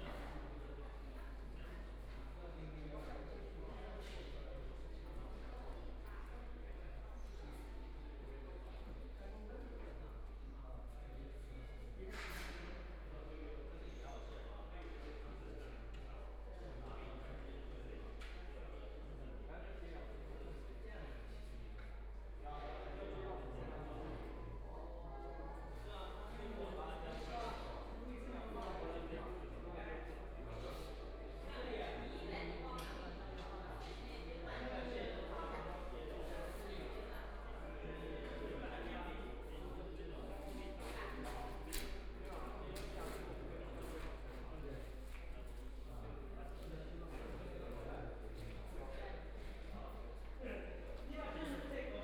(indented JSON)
{
  "title": "Power Station of Art, Shanghai - Works erection test",
  "date": "2013-12-02 16:27:00",
  "description": "Voice conversations between staff, Construction workers are arranged exhibition, the third floor, Binaural recording, Zoom H6+ Soundman OKM II (Power Station of Art 20131202-3)",
  "latitude": "31.20",
  "longitude": "121.49",
  "altitude": "16",
  "timezone": "Asia/Shanghai"
}